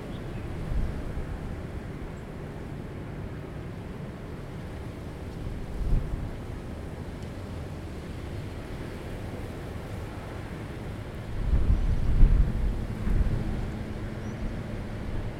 Innovation Way, North Wollongong NSW, Australia - Monday Mornings at UOW Innovation
Recording on the grass behind the UOW Innovation Campus